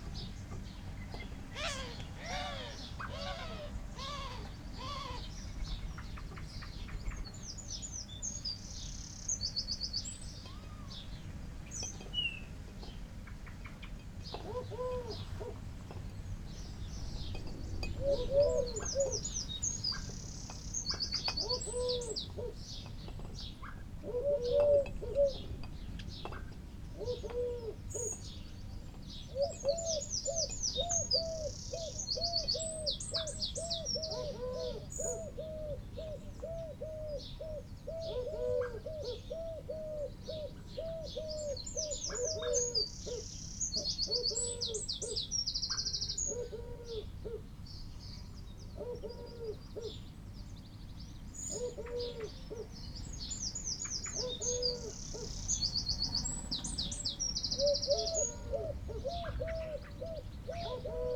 Luttons, UK - a moving away thunderstorm ...
a moving away thunderstorm ... pre-amped mics in a SASS ... bird calls ... song from ... wren ... house sparrow ... blackbird ... collared dove ... wood pigeon ... crow ... linnet ... starling ... background noise ... traffic ... a flag snapping ... ornamental lights dinging off wood work ...
Malton, UK, 27 July 2019